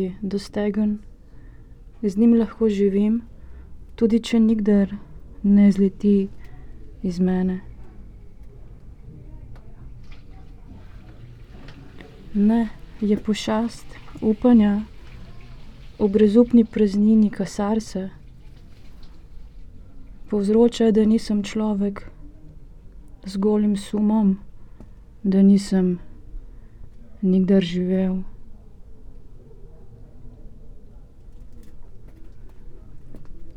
tight emptiness between neighboring houses, gornji trg, ljubljana - reading poem
reading poem Pošast ali Metulj? (Mostru o pavea?), Pier Paolo Pasolini
Ljubljana, Slovenia, 19 April 2014, 16:51